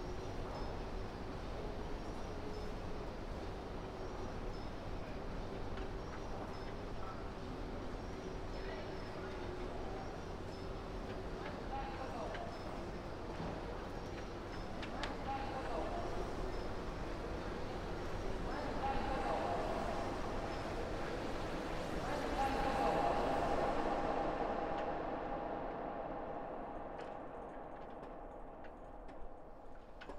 Alkmaar, Nederland - Wind en Bells
Alkmaar (shotgun and ambisonics)
Alkmaar, The Netherlands, 25 April 2014